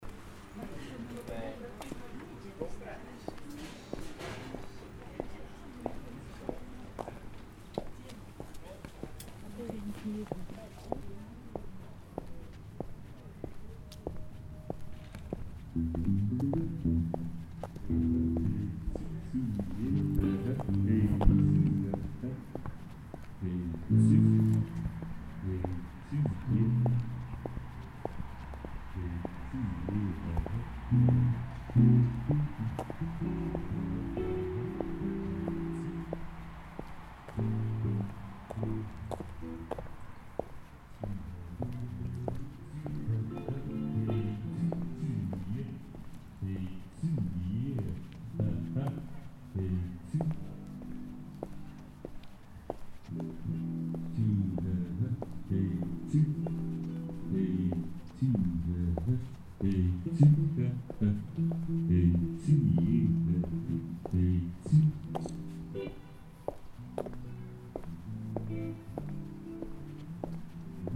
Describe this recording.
jezt Kunst im Marzili 2011, Soundcheck und Sonnenbädeler und Vernissagler.innen in der Nachsaison. Der Nebel schwebt, die Sonne strahlt, der Rundgang kann beginnen.